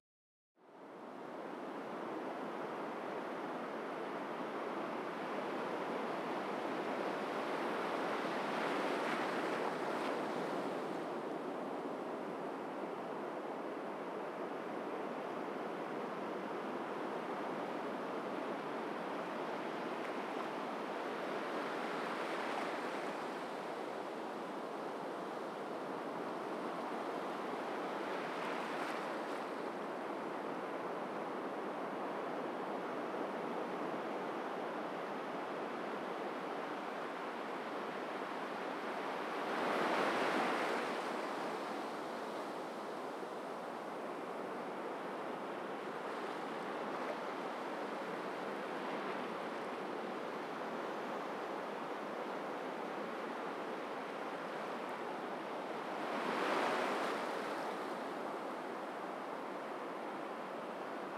Evening sea waves recorded from the beach
South Goa, Goa, India